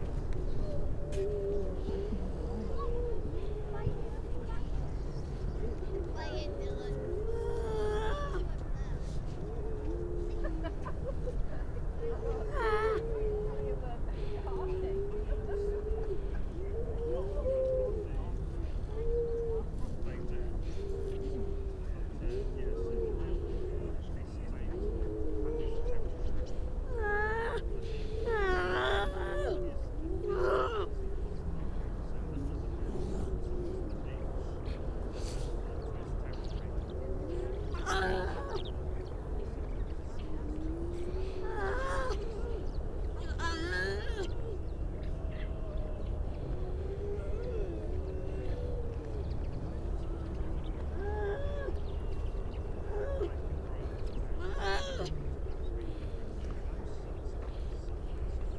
Unnamed Road, Louth, UK - grey seals soundscape ...
grey seal soundscape ... mainly females and pups ... parabolic ... bird calls from ... mipit ... skylark ... pied wagtail ... curlew ... crow ... all sorts of background noise ...
East Midlands, England, United Kingdom